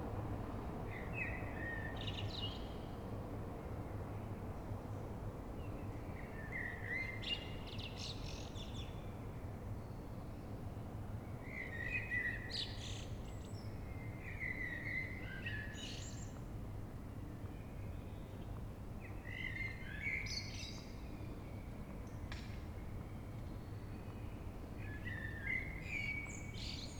Berlin: Vermessungspunkt Friedel- / Pflügerstraße - Klangvermessung Kreuzkölln ::: 10.07.2010 ::: 04:33
10 July 2010, 04:33